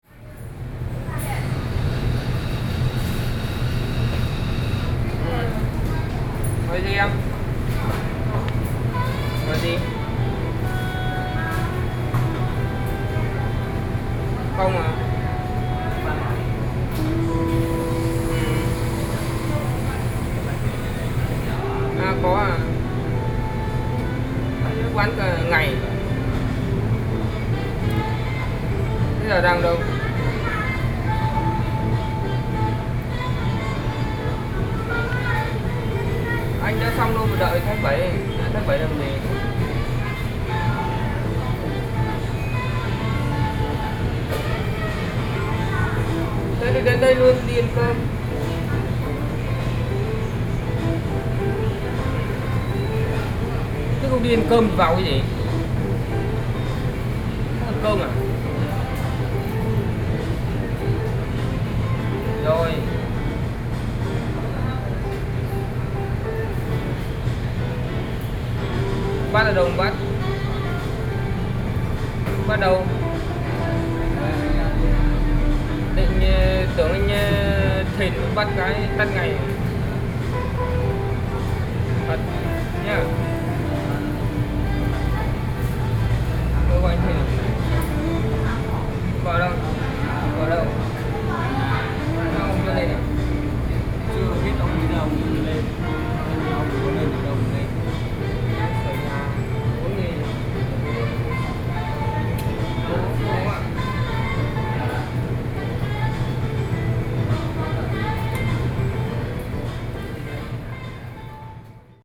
In convenience stores inside, Air conditioning, sound, Hot weather
Sony PCM D50+ Soundman OKM II
New Taipei City, Taiwan